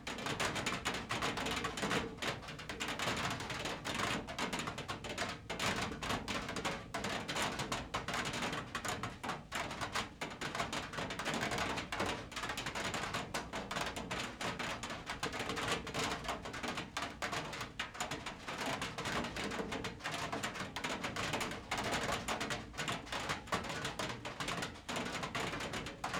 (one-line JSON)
{"title": "Lithuania, Utena, raindrops on balcony", "date": "2011-05-23 12:20:00", "latitude": "55.51", "longitude": "25.59", "altitude": "120", "timezone": "Europe/Vilnius"}